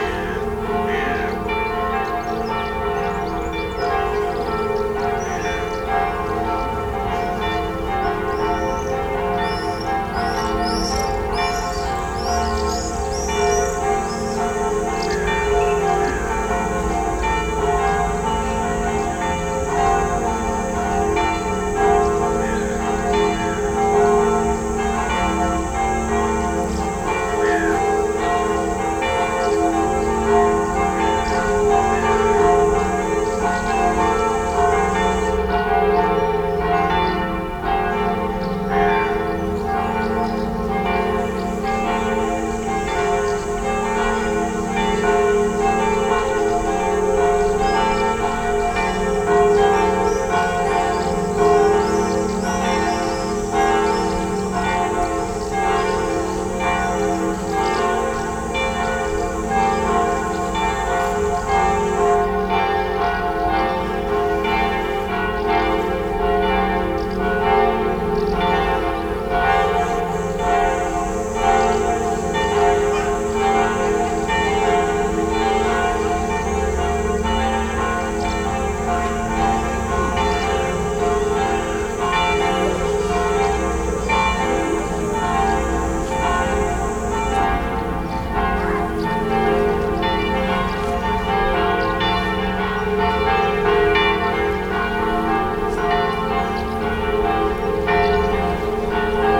Montignac, Rue Du Calvaire, bees and bells
Montignac, France, 25 August 2008